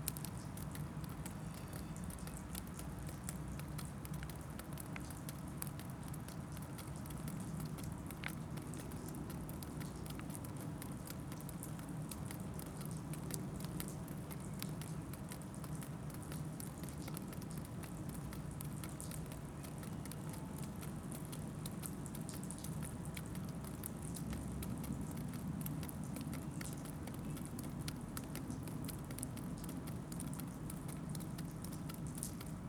Tallinn Uus
melting dirty snow everywhere